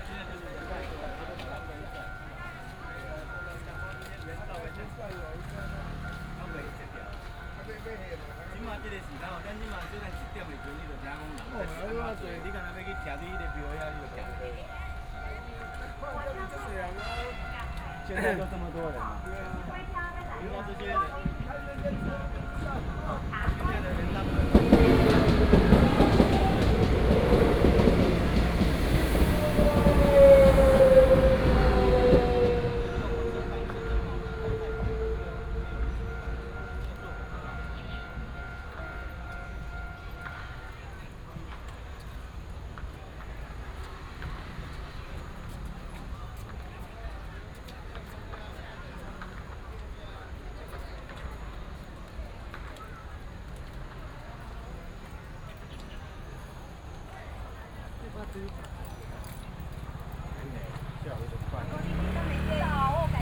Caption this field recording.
Walking in the small village, Fireworks and firecrackers, Traffic sound, Many people attend the temple, The train passes by